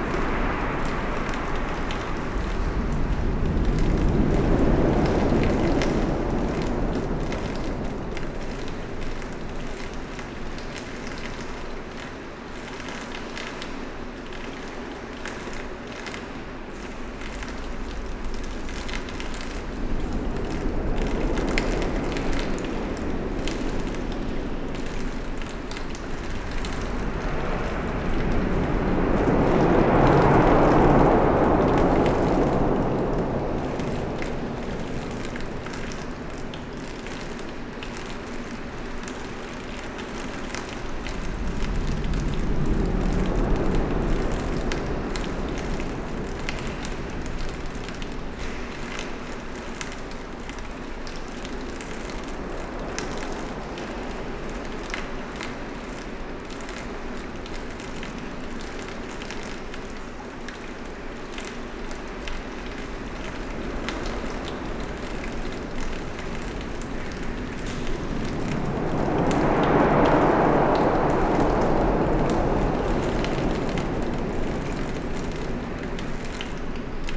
Dinant, Belgium - Charlemagne bridge
Inside the Charlemagne bridge, sound of the water collected in strange curved tubes. Water is flowing irregularly.